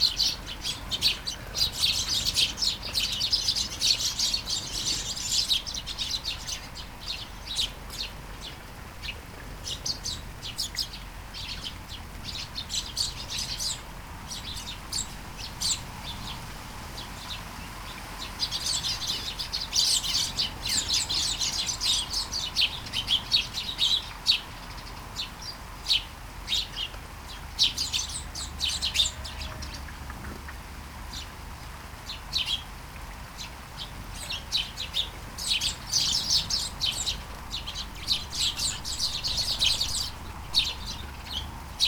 Flying sparrows in the garden, early morning. Light shower.
Vols de moineaux dans le jardin, au petit matin. Pluie fine.